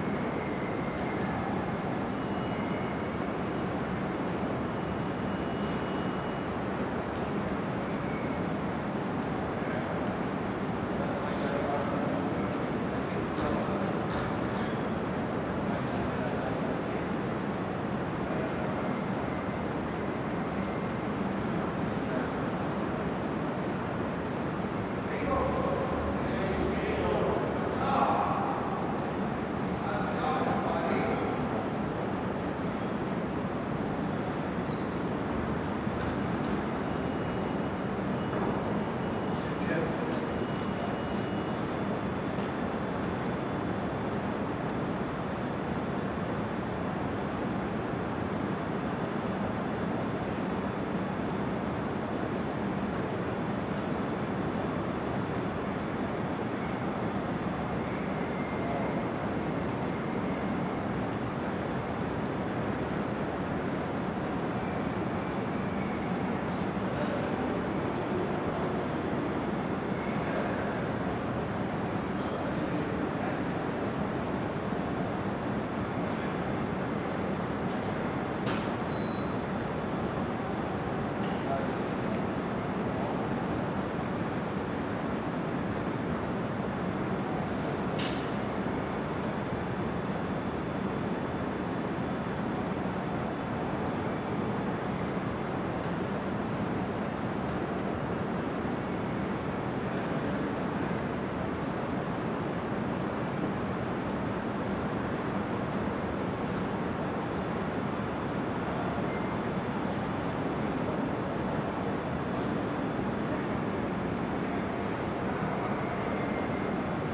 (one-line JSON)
{"title": "MetLife Building, Park Ave, New York, NY, USA - Ground floor lobby of MetLife Building", "date": "2022-04-05 23:05:00", "description": "Sounds from the spacious ground floor lobby of MetLife Building.\nRecorded at night, mostly empty, only the security guards are heard.", "latitude": "40.75", "longitude": "-73.98", "altitude": "24", "timezone": "America/New_York"}